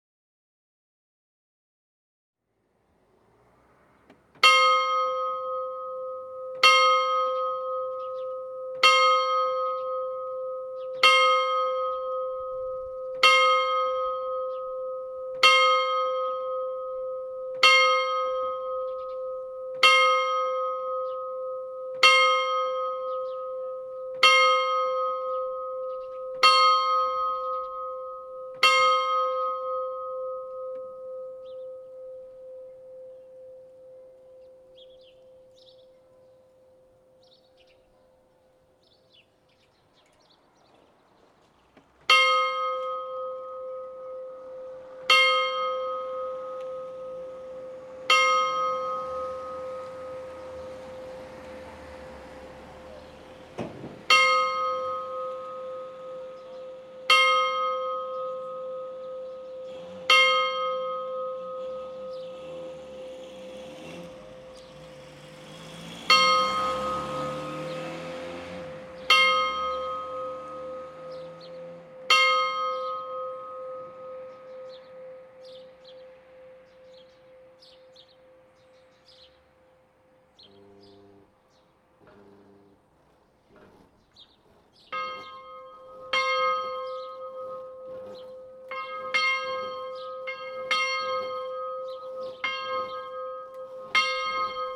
{
  "title": "Rue du Bois, Bousignies, France - Chapelle de Bousignies",
  "date": "2021-01-18 12:00:00",
  "description": "Bousignies (Nord)\nChapelle\n12h + angélus\nSonneries automatisées",
  "latitude": "50.43",
  "longitude": "3.35",
  "altitude": "17",
  "timezone": "Europe/Paris"
}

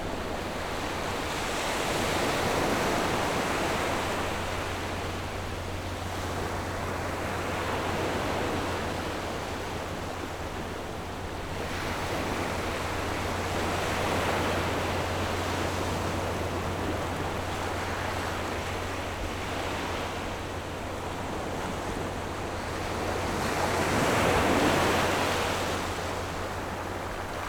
{
  "title": "珠螺灣, Nangan Township - At the beach",
  "date": "2014-10-14 09:55:00",
  "description": "At the beach, Tide, Sound of the waves\nZoom H6 +RODE NT4",
  "latitude": "26.16",
  "longitude": "119.93",
  "altitude": "7",
  "timezone": "Asia/Taipei"
}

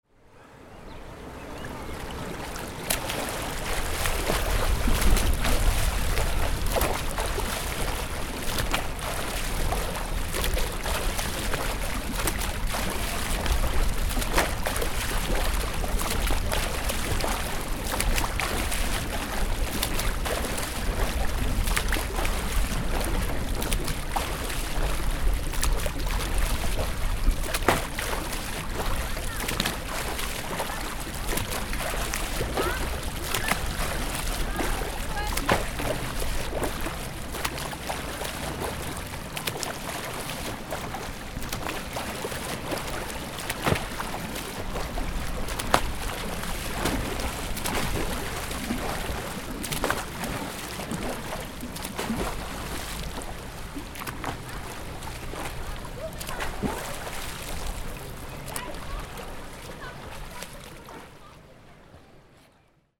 {
  "title": "Längenschwimmen im Marzili",
  "date": "2011-06-10 15:16:00",
  "description": "Brustschwimmen im Marzilibad im Juni, das Aarewasser ist noch saukalt wenige Schwimmer.innen",
  "latitude": "46.94",
  "longitude": "7.44",
  "altitude": "504",
  "timezone": "Europe/Zurich"
}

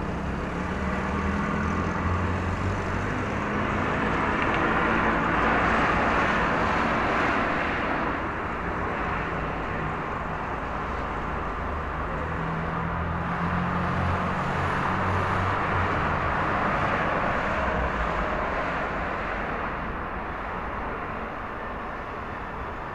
{
  "title": "St Petersburg, Torzhkovskaya ulitsa - St. Petersburg nigt traffic.",
  "date": "2009-04-28 02:40:00",
  "latitude": "59.99",
  "longitude": "30.31",
  "altitude": "10",
  "timezone": "Europe/Moscow"
}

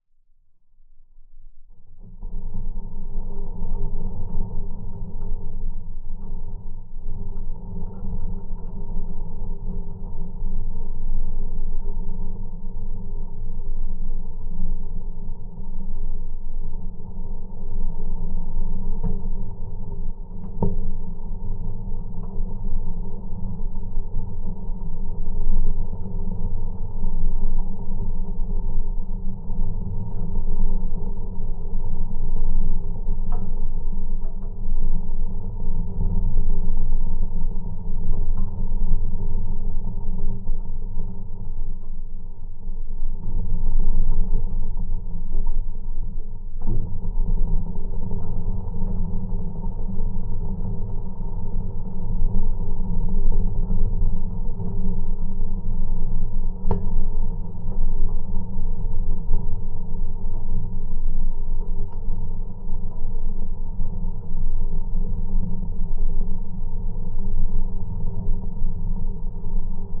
{
  "title": "Bagdonys, Lithuania, ferry",
  "date": "2022-09-03 16:10:00",
  "description": "little, hand-driven, ferry to island. geophone on metallic construction at the fence",
  "latitude": "55.90",
  "longitude": "25.01",
  "altitude": "77",
  "timezone": "Europe/Riga"
}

